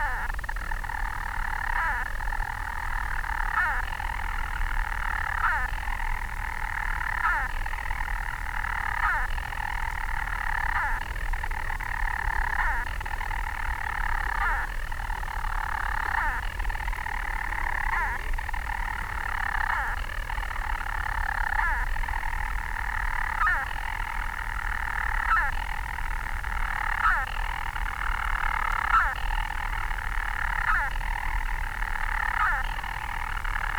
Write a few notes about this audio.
Skokholm Island Bird Observatory ... storm petrel singing ..? birds nest in chambers in the dry stone walls ... they move up and down the spaces ... they also rotate while singing ... lots of thoughts that two males were singing in adjacent spaces ... open lavalier mics clipped to sandwich box ... on a bag close to wall ...